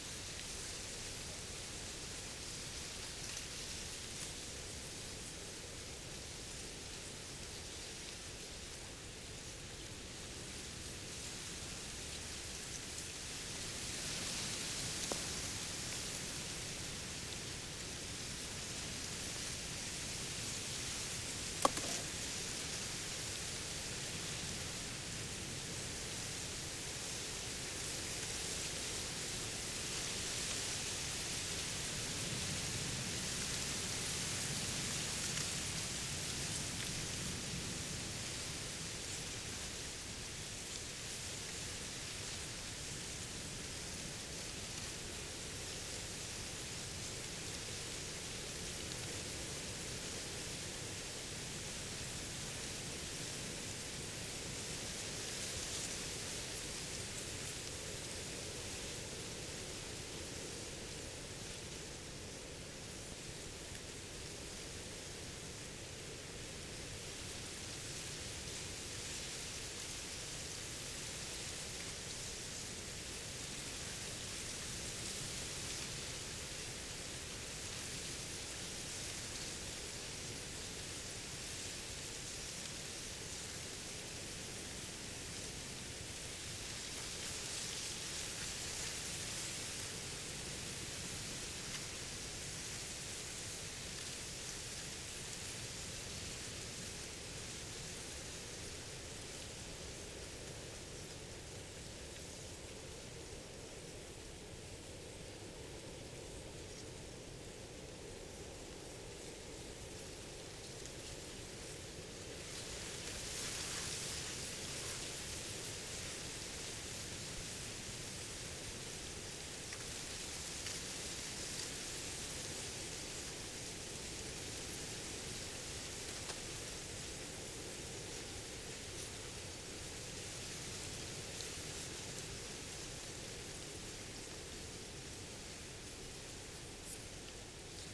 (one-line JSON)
{"title": "neurüdnitz/oderaue: river bank - the city, the country & me: reed swaying in the wind", "date": "2015-12-30 14:24:00", "description": "stormy afternoon, reed swaying in the wind\nthe city, the country & me: december 30, 2015", "latitude": "52.82", "longitude": "14.17", "altitude": "2", "timezone": "Europe/Berlin"}